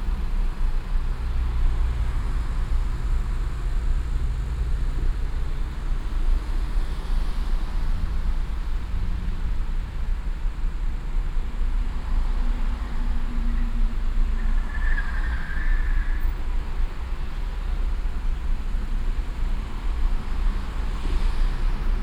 cologne, autobahnabfahrt - innere kanalstrasse, im verkehr
abfahrt von der a 57 nach köln nord - stauverkehr vor der ampel - nachmittags - parallel stadtauswärts fahrende fahrzeuge - das quietschen eines zu schnellen pkw in der kurve - streckenaufnahme teil 02
soundmap nrw: social ambiences/ listen to the people - in & outdoor nearfield recordings